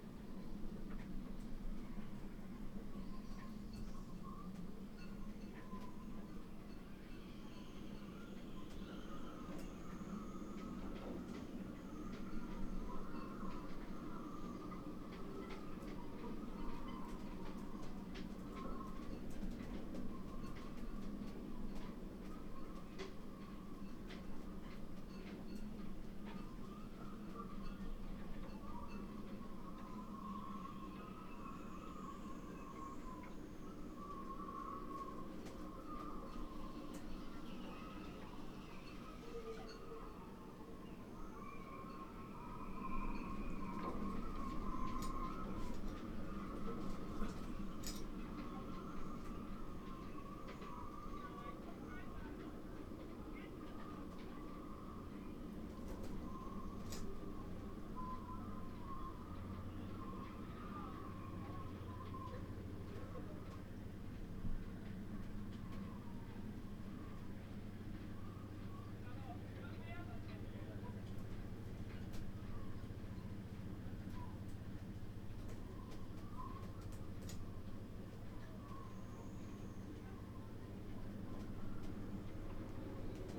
{
  "title": "workum, het zool: marina, berth h - the city, the country & me: marina, aboard a sailing yacht",
  "date": "2009-07-18 13:37:00",
  "description": "wind flaps the tarp, voices outside\nthe city, the country & me: july 18, 2009",
  "latitude": "52.97",
  "longitude": "5.42",
  "altitude": "1",
  "timezone": "Europe/Berlin"
}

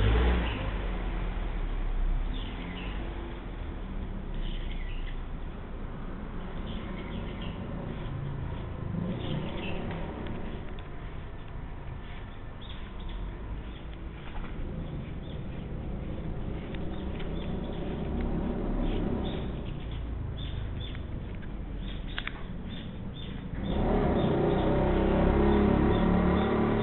{
  "title": "at the corner in nakano 18.12.07 /3.30 pm",
  "latitude": "35.71",
  "longitude": "139.66",
  "altitude": "46",
  "timezone": "GMT+1"
}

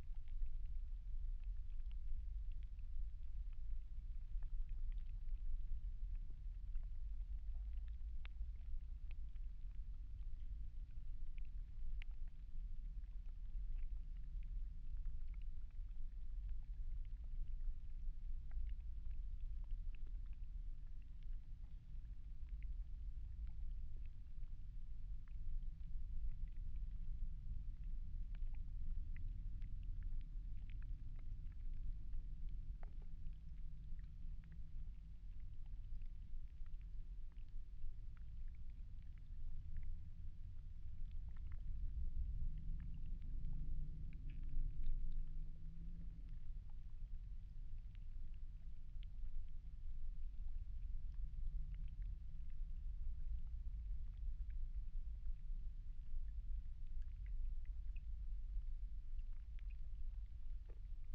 {
  "title": "Houtrustweg - hydrophone rec in the shore, one mic inside a pipe",
  "date": "2009-05-01 14:09:00",
  "description": "Mic/Recorder: Aquarian H2A / Fostex FR-2LE",
  "latitude": "52.09",
  "longitude": "4.26",
  "altitude": "5",
  "timezone": "Europe/Berlin"
}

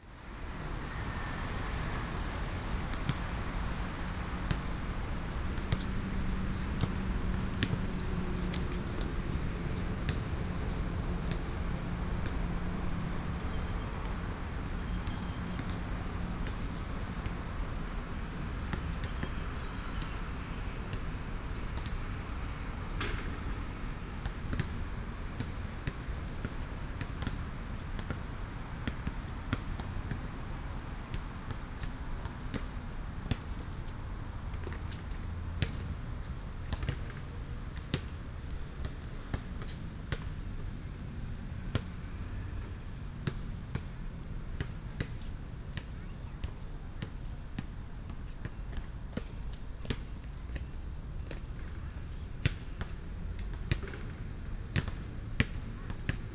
{
  "title": "東大路二段16號, Hsinchu City - basketball and traffic sound",
  "date": "2017-09-27 17:12:00",
  "description": "Next to the basketball court, traffic sound, Binaural recordings, Sony PCM D100+ Soundman OKM II",
  "latitude": "24.81",
  "longitude": "120.97",
  "altitude": "21",
  "timezone": "Asia/Taipei"
}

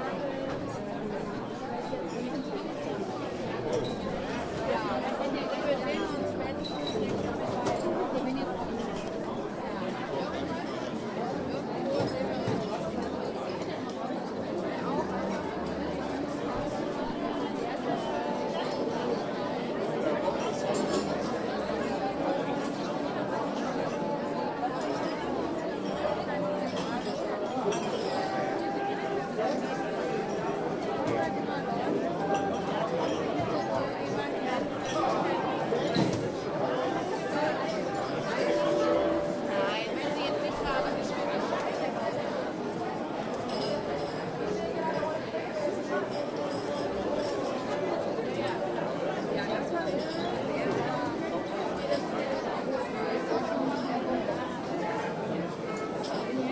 {
  "title": "Museum Ludwig, Cologne, Opening Exhibition Gerhard Richter Abstract Paintings",
  "date": "2008-11-17 20:33:00",
  "description": "Atmo at the opening of the exhibition \"Abstract paintings\" of the German painter Gerhard Richter at the Museum Ludwig, Colgone.",
  "latitude": "50.94",
  "longitude": "6.96",
  "altitude": "60",
  "timezone": "GMT+1"
}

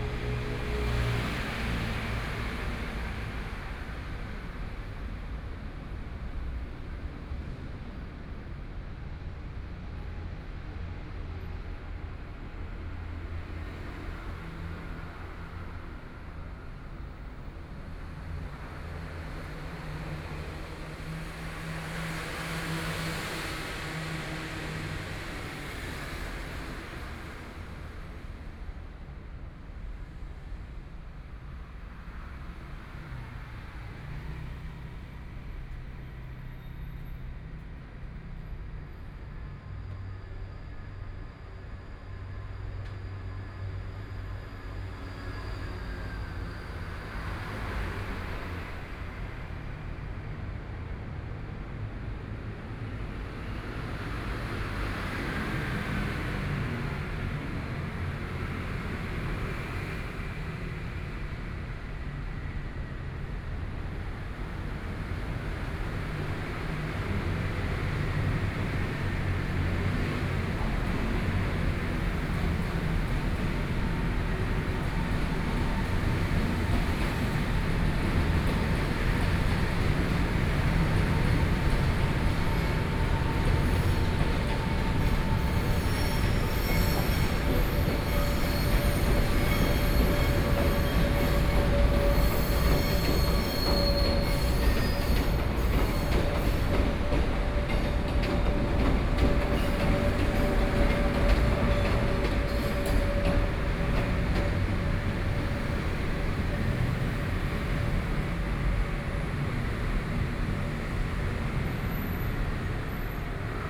{
  "title": "Dongda Rd., Hsinchu - Train traveling through",
  "date": "2013-09-26 17:53:00",
  "description": "Train traveling through, Traffic Noise, Sony PCM D50 + Soundman OKM II",
  "latitude": "24.80",
  "longitude": "120.98",
  "altitude": "26",
  "timezone": "Asia/Taipei"
}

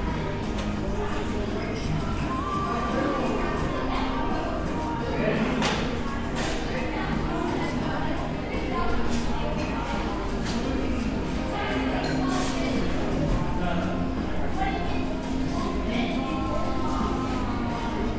{
  "title": "West Windsor Township, NJ, USA - Panera Bread",
  "date": "2014-03-02 17:05:00",
  "description": "Sitting through a meal at Panera Bread.",
  "latitude": "40.31",
  "longitude": "-74.68",
  "timezone": "America/New_York"
}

